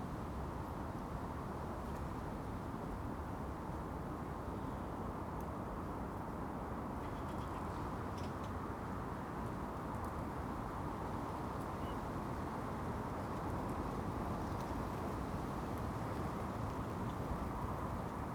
Contención Island Day 41 inner southwest - Walking to the sounds of Contención Island Day 41 Sunday February 14th
The Drive Westfield Drive Fernville Road Park Villas
Road noise
drifted in on the wind
Little moves
in the cold-gripped cul-de-sac